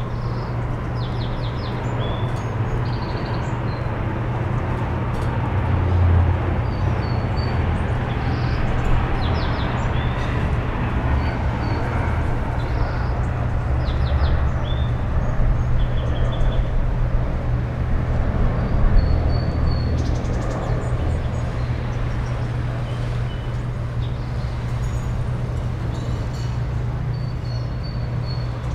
Narvarte Oriente, Ciudad de México, D.F., México - Just a busy corner in Mexico City
Downstairs is a repair shop, cars passing by, birds, airplanes... Nice spot! Recorded with a Perception 220, to a Fast-Track Pro on Logic.
11 February 2016, 12:30